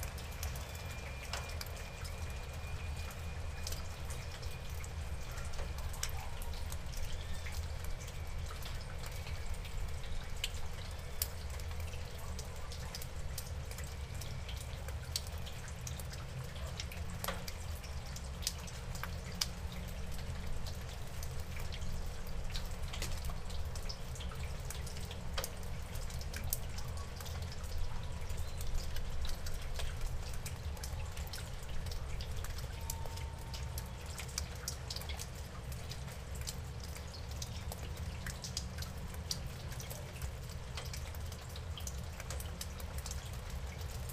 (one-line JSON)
{"title": "Cdad. Jardin Lomas de Palomar, Buenos Aires, Argentina - rain in my garden", "date": "2016-07-02 18:08:00", "description": "I left my Zoom H2 under the eave for some minutes while rain was falling. There's a distant plane, some very weird-sounding dogs barking, some birds, and lots of drips and drops everywhere.", "latitude": "-34.59", "longitude": "-58.59", "altitude": "24", "timezone": "America/Argentina/Buenos_Aires"}